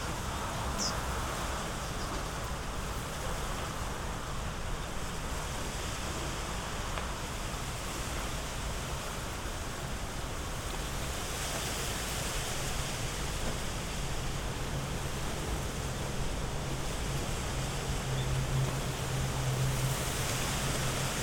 Strong wind blowing into young bamboo trees, a few birds, and some human sounds in rural Japan on New Year's Day, 2015.